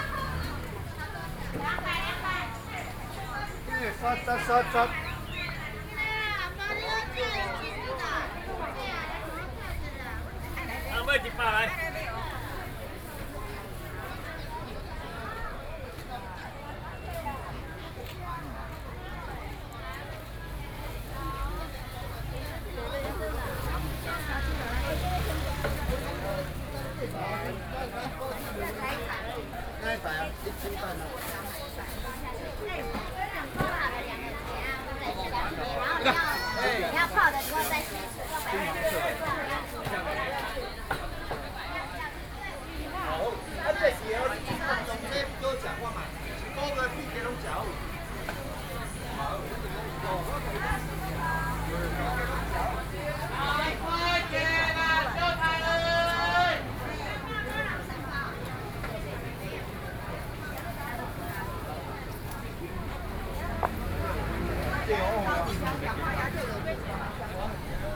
Linkou Rd., Linkou Dist. - Walking through the traditional market
Walking through the traditional market
Sony PCM D50+ Soundman OKM II
New Taipei City, Taiwan, 4 July 2012, ~09:00